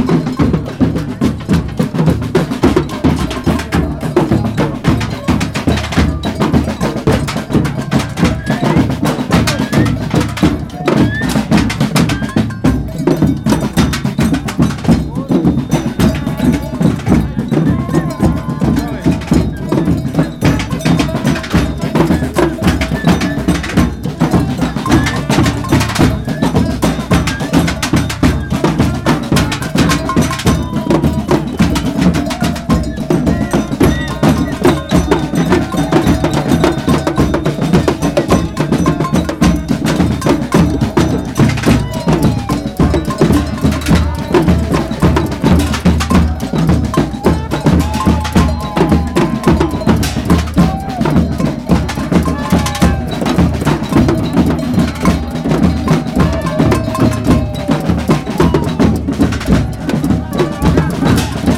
Cologne, Neusser Str., Deutschland - Geisterzug/ Ghosts parade
Musicians with drums and trumpet make a spontaneous session during the "Geisterzug" (Ghosts parade). The performance ends abruptly when an armada of municipal garbage collection trucks arrives, cleaning the streets of glas bottles.
"Geisterzug" is an alternative and political carnival parade in Cologne, taking place in the late evening of carnivals saturday. Everyone who wants can join the parade.